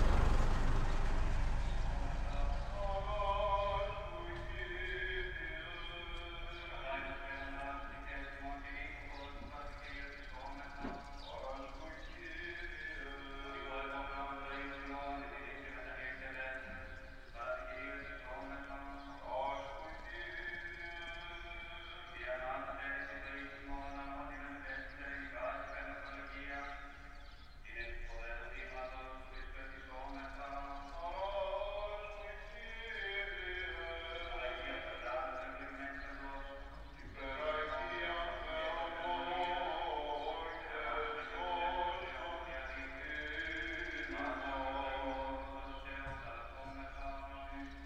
Fournes, Crete, street, church
morning mass in nearby chuech
Fournes, Greece, 26 April 2019